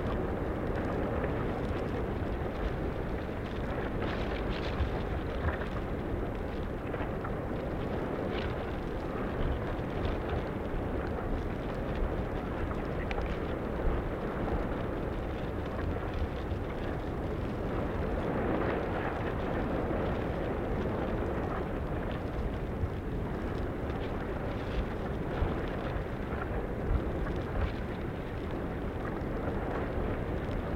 under the dunes, Smiltyne, Lithuania
two hydrophones burried in dunes sand. windy day.
16 August 2016, 13:41